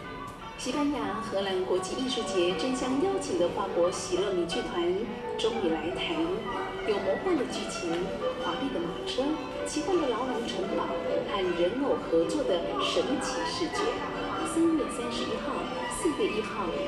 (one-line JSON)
{"title": "Ecological District Station - Couple quarrel shout", "date": "2012-02-25 18:33:00", "description": "Couple quarrel shout, in the MRT platform, Sony ECM-MS907, Sony Hi-MD MZ-RH1", "latitude": "22.68", "longitude": "120.31", "altitude": "19", "timezone": "Asia/Taipei"}